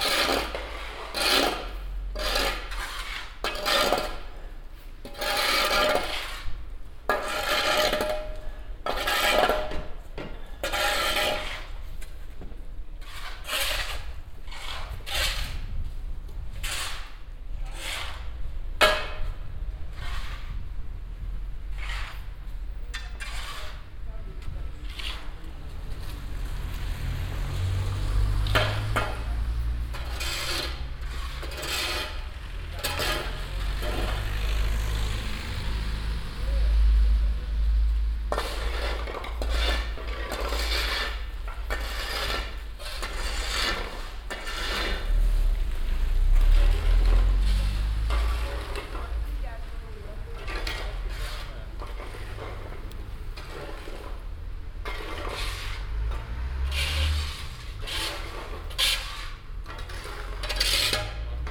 budapest, tüzolto utca, cleaning the street from snow and ice
in the morning - a group of man cleaning the street from snow and ice
international city scapes and social ambiences